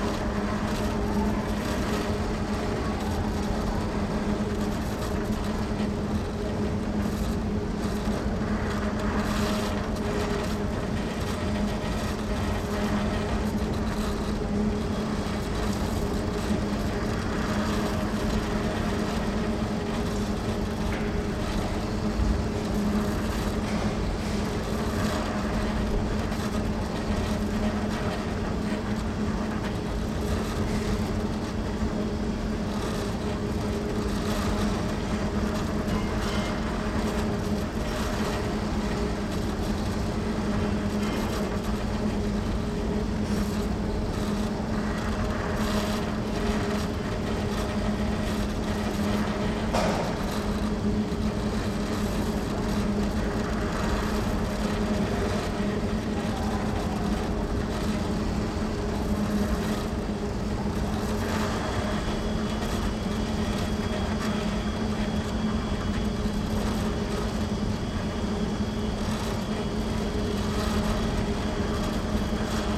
berlin, hermannplatz: warenhaus, defekte rolltreppe - the city, the country & me: grinding noise of moving staircase and children entering karstadt department store
the city, the country & me: june 3, 2008